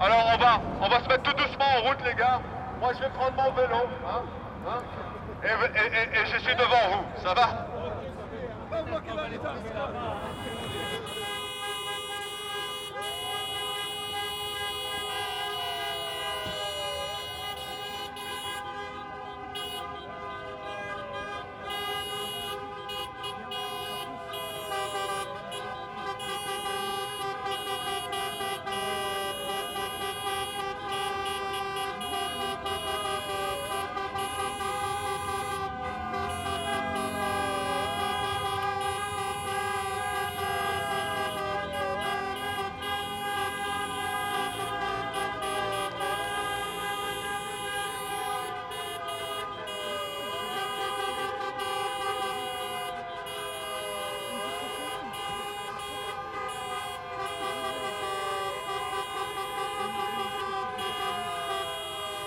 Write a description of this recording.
Taxis protesting against Uber service. Multiple taxi cars on the place, horns, klaxons. Voice in the megaphone and interviwe of a driver. Manifestation de taxis contre la plateforme Uber.